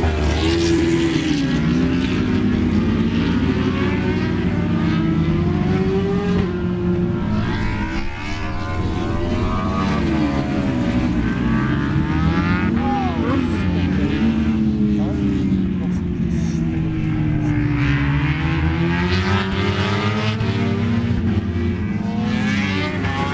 Donington Park Circuit, Derby, United Kingdom - British Motorcycle Grand Prix 2003 ... moto grandprix ...
British Motorcycle Grand Prix 2003 ... Practice part two ... 990s and two strokes ... one point stereo mic to minidisk ...
11 July, 10:20